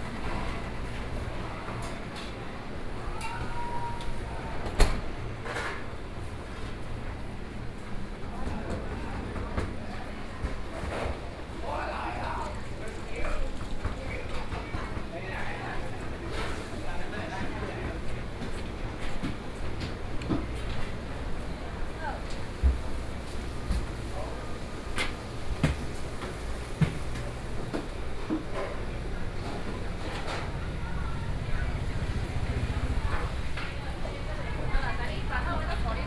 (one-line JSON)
{"title": "Yong'an St., Changhua City - soundwalk", "date": "2013-10-08 12:34:00", "description": "walking in the street, Traditional market and the Bazaar, Zoom H4n+ Soundman OKM II", "latitude": "24.08", "longitude": "120.55", "altitude": "30", "timezone": "Asia/Taipei"}